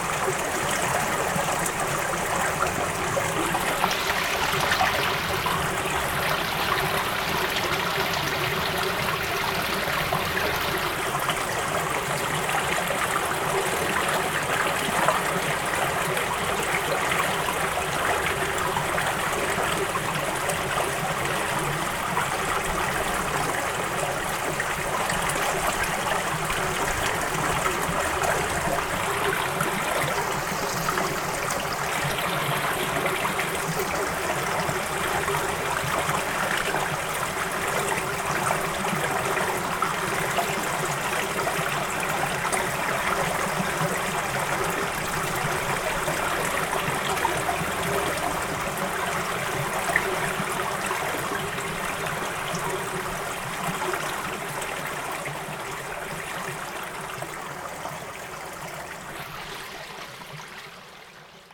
moitzfeld, haus hardt. small stream
a small stream in a small valley by a forrest in the wintertime
soundmap nrw - topographic field recordings and social ambiences